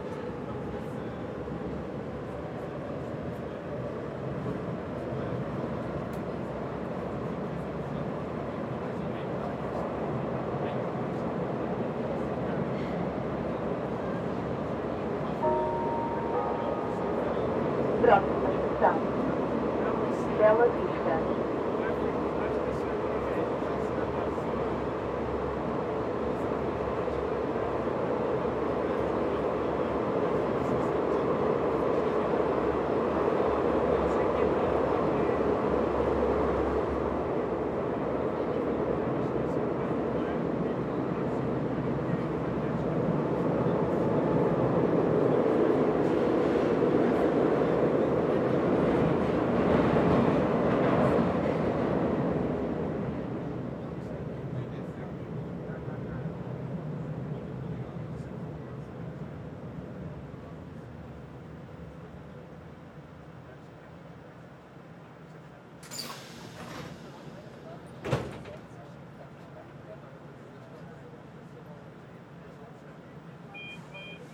São Sebastião, Lisboa, Portugal - The Red Line (Lisbon Metro)
The Red Line (Lisbon Metro), from Airport to Sao Sebastião.
11 April 2022, ~12:00